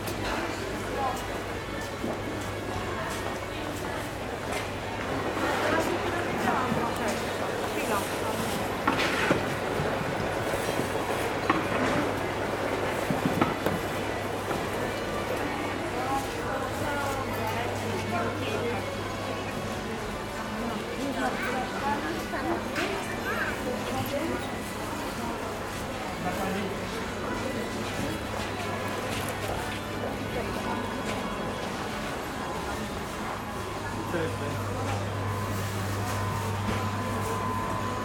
binaural walk-through in the covered market of Baluty. Made during a sound workshop organized by the Museum Sztuki of Poland
Łódź, Poland